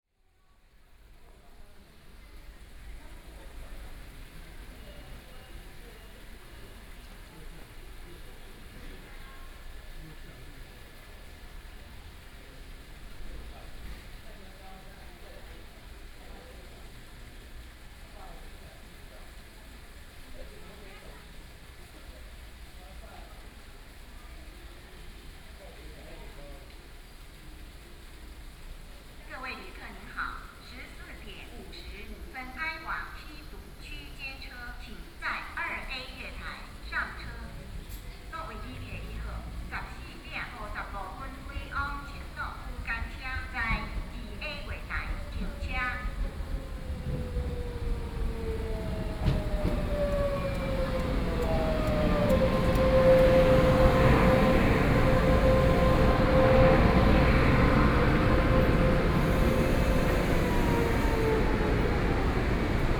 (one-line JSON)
{"title": "Toucheng Station, Taiwan - On the platform", "date": "2013-11-07 14:59:00", "description": "On the platform waiting for the train, Station broadcast messages, Train station, Binaural recordings, Zoom H4n+ Soundman OKM II", "latitude": "24.86", "longitude": "121.82", "altitude": "8", "timezone": "Asia/Taipei"}